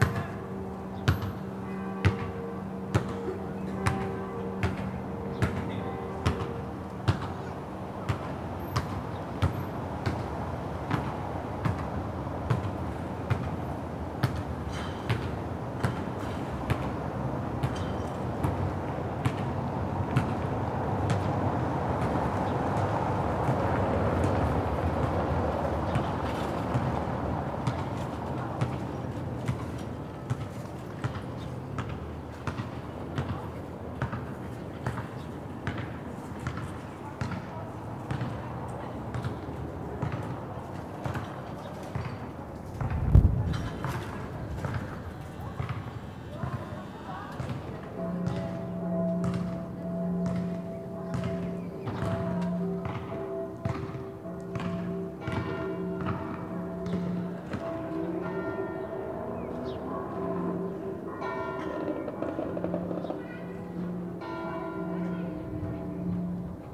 Reuterstrasse: Balcony Recordings of Public Actions - Attempt at Ode to Joy in the neighborhood
Sunday, March 22,2020, 6 pm - there was a call to perform "Ode to Joy" together, from the balconies and window, in Corona times. I was curious to hear whether it would happen.
It didn't. Not here at least. The church bells were there, as usual on a Sunday evening. The first bell got nicely mixed with two kids on their way home playing with a basket ball.
One short attempt on an accordion.
In times of closed EU borders, refugees kept outside, in camps, it would be better, as someone suggested, to perform The International, or whatever, but not the European hymn. imho
Recorded on a Sony PCM D100 from my balcony again.